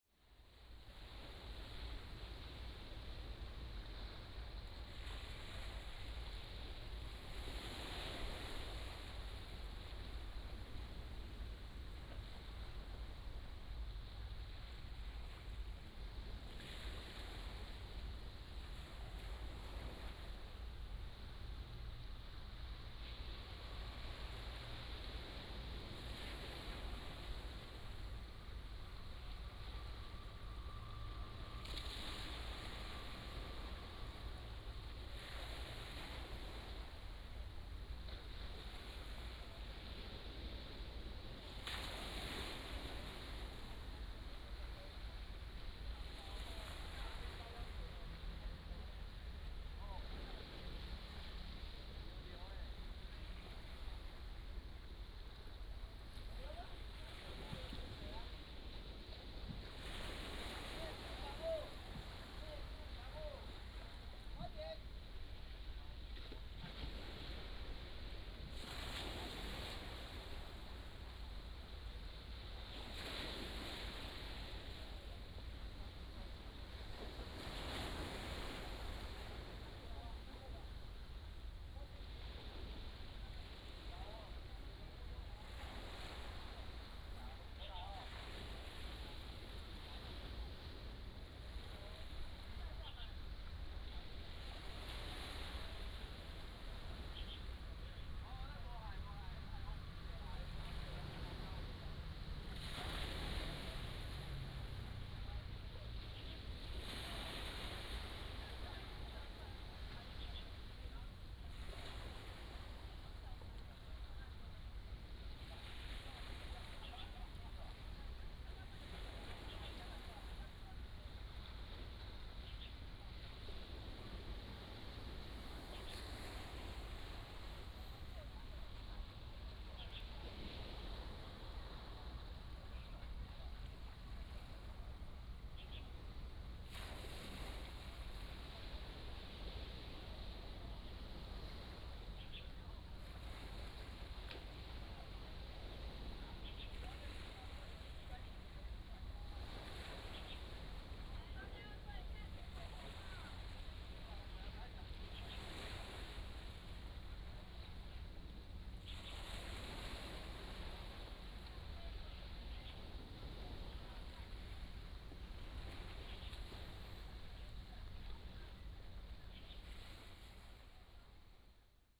On the coast, Sound of the waves
Liouciou Township, Pingtung County, Taiwan, 1 November 2014